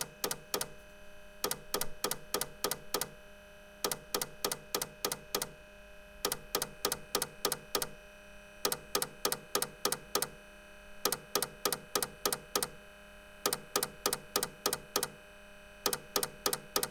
Poznan, Mateckiego Street - clicking of dying tape plaer
last breaths of an old tape player. it's beyond repair. twitching, buzzing and ticking.
Poznań, Poland